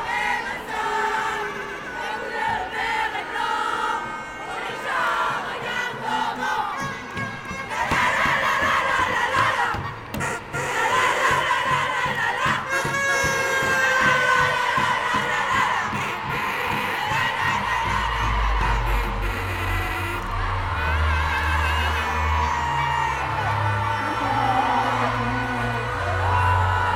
Mons, Belgium - K8strax race - Kallah girl scouts
Because it's us and we are proud to be like that ! Every year, scouts make a very big race, using cuistax. It's a 4 wheels bicycle, with two drivers. The race was located in the past in the city of Court-St-Etienne ; now it's in Mons city. The recording begins with horns. After, 2:45 mn, the Kallah guides (understand the river Kallah girl scouts) shout and sing before the race. I travel along them. Everybody is very excited to be here. The race is called k8strax. Its a codename for thighs + hunt down.